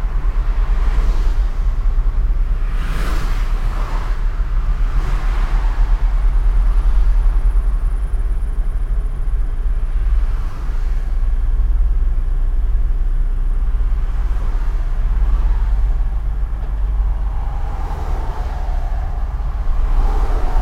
giessen, highway e44, traffic jam
in a traffic jam, while traffic is passing by regular on the parallel street
soundmap nrw - social ambiences and topographic field recordings